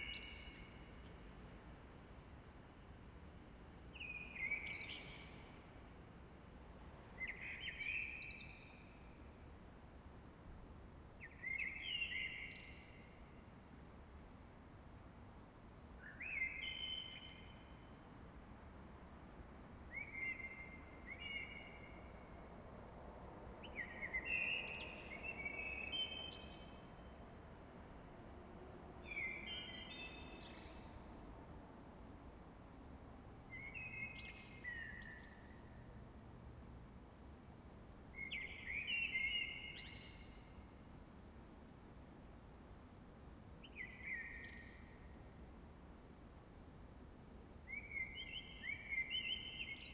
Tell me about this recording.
Chapter XI of Ascolto il tuo cuore, città. I listen to your heart, city, Tuesday, March 17th 2020. Fixed position on an internal terrace at San Salvario district Turin, one week after emergency disposition due to the epidemic of COVID19. Start at 6:17 a.m. end at 7:17 a.m. duration of recording 60'00''. Sunset was at 6:39 a.m.